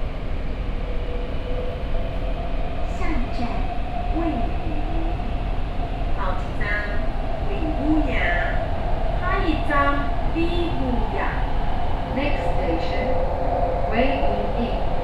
苓雅區正大里, Kaohsiung City - Orange Line (KMRT)
from Cultural Center station to Weiwuying Station